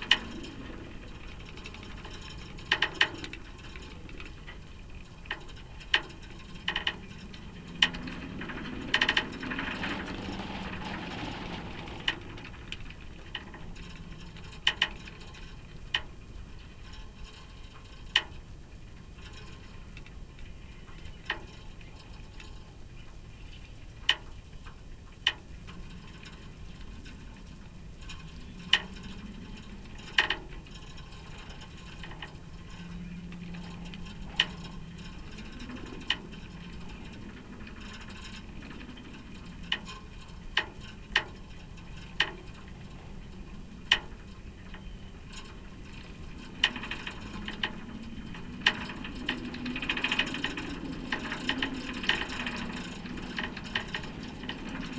workum, het zool: marina, berth h - the city, the country & me: marina, sailing yacht, babystay
contact mic on babystay
the city, the country & me: july 8, 2011